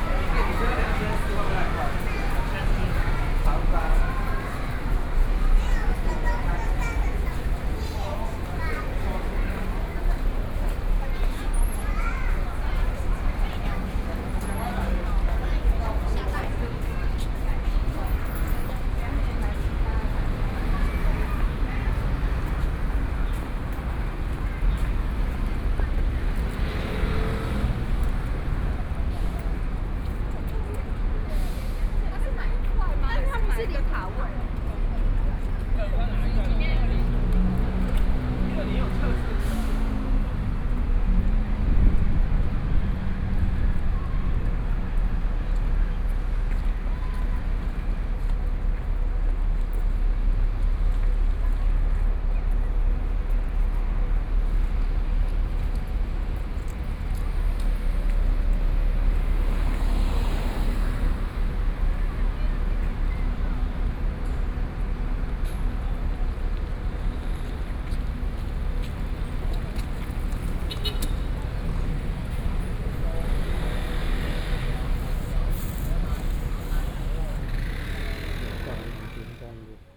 Taipei City, Taiwan - soundwalk
Traffic Sound, Noon break a lot of people walking in the road ready meal, Walking in the streets, Various shops sound, Construction noise